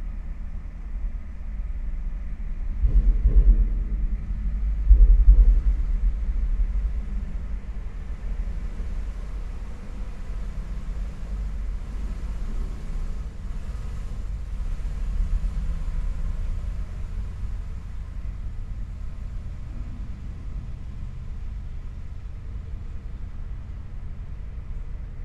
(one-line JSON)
{"title": "Utena, Lithuania, inside big rainwater pipe", "date": "2018-08-09 10:20:00", "description": "during the reconstruction of one of the main streets they have changed all communications under the street and installed big rainwater pipe. could not resist to get into the pipe and record the chtonic sounds. four channels: two omni mics and two contact ones.", "latitude": "55.51", "longitude": "25.60", "altitude": "105", "timezone": "GMT+1"}